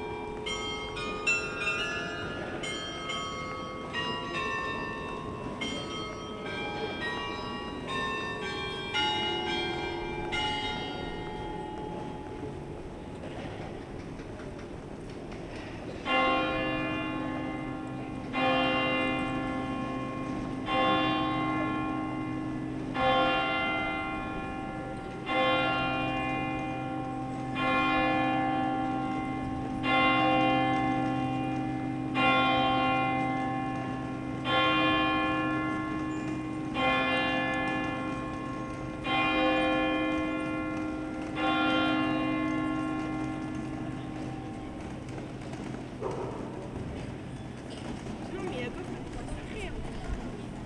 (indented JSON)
{
  "title": "Place des Héros, Arras, France - Atmosphere on Heroes Square, Arras",
  "date": "2020-01-17 11:56:00",
  "description": "People and chimes in Arras, Heroes Square, Binaural, Zoom H3VR",
  "latitude": "50.29",
  "longitude": "2.78",
  "altitude": "78",
  "timezone": "Europe/Paris"
}